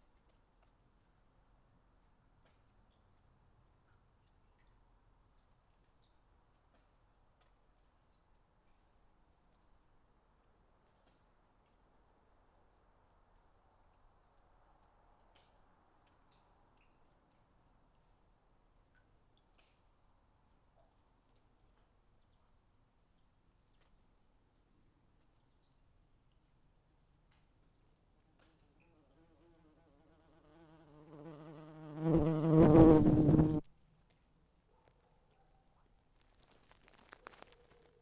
Rubikiai lake, Lithuania, bumblebee nest
some crack under the bridge. bumbkebees fly to the crack...